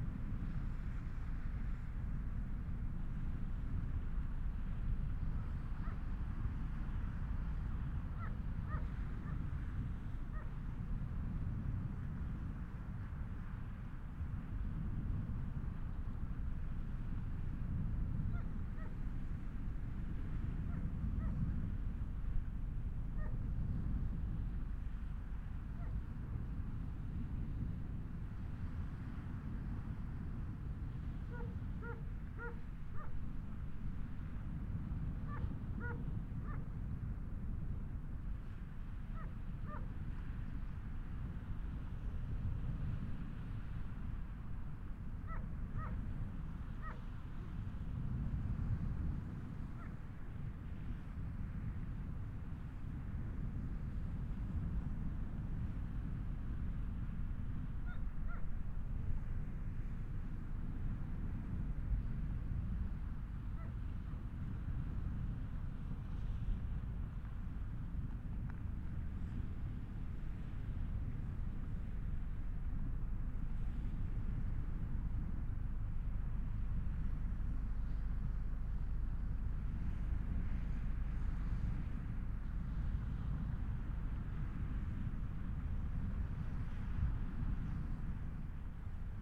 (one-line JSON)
{"title": "Poste-de-secours-Piemansons-Plage, Camargue, Arles, Frankreich - The sea and an army helicopter", "date": "2021-10-19 13:40:00", "description": "At this time of the year only few people around. Some fishermen (the dog of one can be heard). An army helicopter passing overhead, probably on patrol along the coastline. Binaural recording. Artificial head microphone set up in the windshade of the Poste-de-secours building. Microphone facing west. Recorded with a Sound Devices 702 field recorder and a modified Crown - SASS setup incorporating two Sennheiser mkh 20 microphones.", "latitude": "43.35", "longitude": "4.78", "altitude": "1", "timezone": "Europe/Paris"}